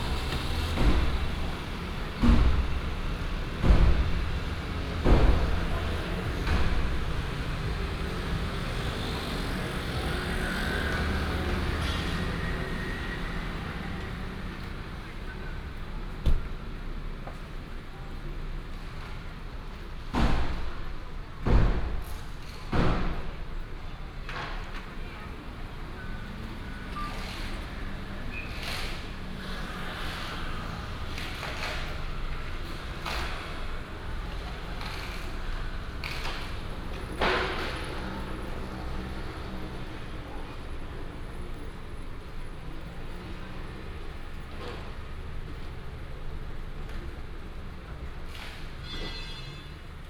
Datong Rd., Douliu City - Traffic and construction sound
In front of the convenience store, Traffic sound, Opposite construction beating sound